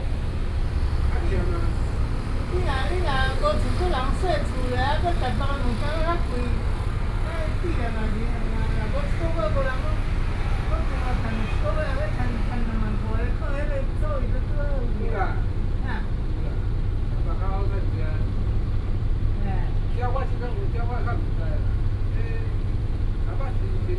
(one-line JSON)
{"title": "Nanning Rd., 蘇澳鎮南安里 - In the bus station", "date": "2014-07-28 14:29:00", "description": "Next to the pier, In the bus station, Traffic Sound, Hot weather", "latitude": "24.58", "longitude": "121.87", "altitude": "6", "timezone": "Asia/Taipei"}